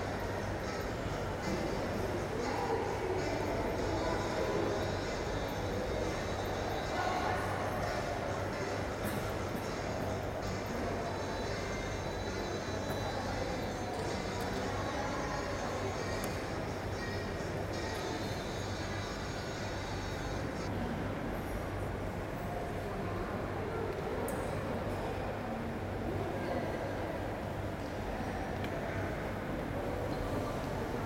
recorded june 29th, 2008.
part 2 of recording.
project: "hasenbrot - a private sound diary"